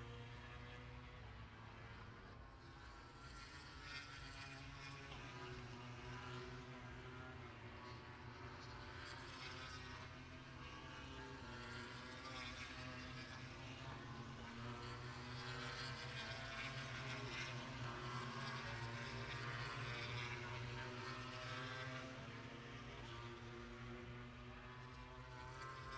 Silverstone Circuit, Towcester, UK - british motorcycle grand prix 2019 ... moto grand prix ... fp2 ...
british motorcycle grand prix 2019 ... moto grand prix ... free practice two ... maggotts ... lavalier mics clipped to bag ... background noise ...
23 August 2019, 14:10